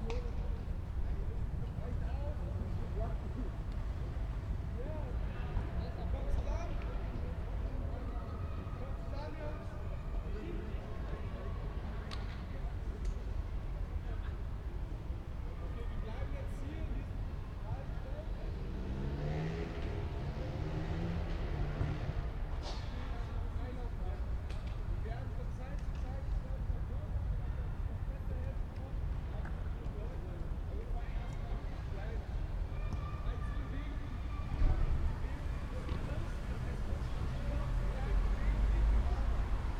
Mitte, Berlin, Germany - Berlin Mitte Fussballtraining
Fußball-training in Berlin Mitte.
19 November 2015, 18:52